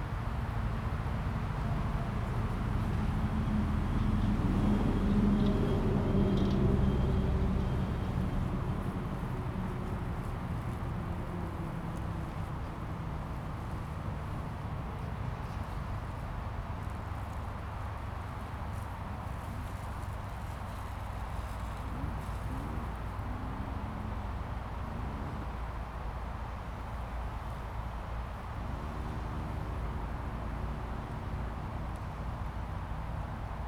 喜南里, South Dist., Tainan City - Windbreak forest
Windbreak forest, Traffic sound, Casuarina equisetifolia
Zoom H2n MS+ XY